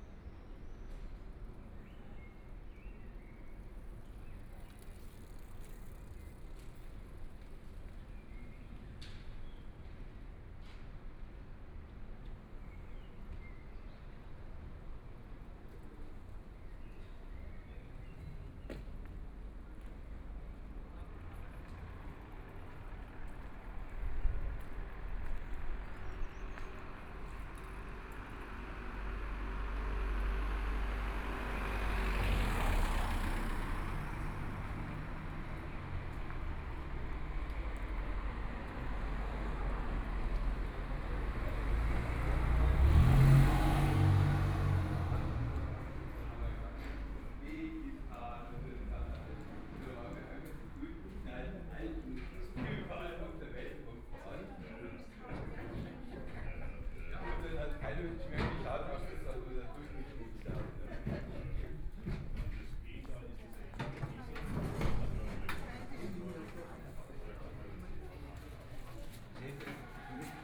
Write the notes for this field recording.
Walking in and outside the gallery space, Birdsong, Traffic Sound